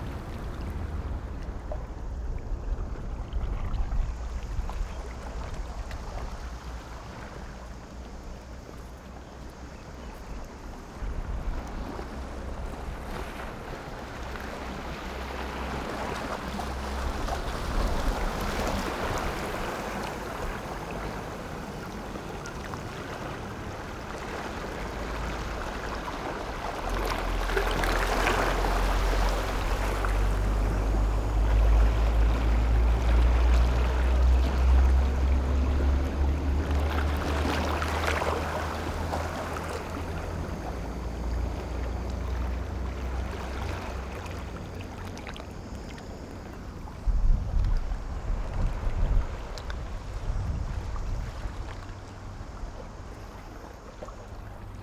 瑞芳區南雅奇岩, New Taipei City - In the rocky coast
In the rocky coast, Sound wave
Sony PCM D50
Ruifang District, 北部濱海公路